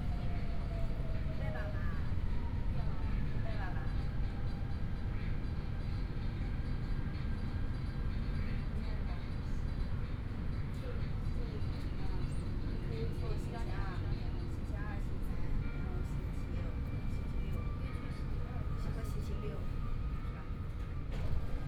Yangpu District, Shanghai - Line 10 (Shanghai Metro)
from Wujiaochang station to Siping Road station, Binaural recording, Zoom H6+ Soundman OKM II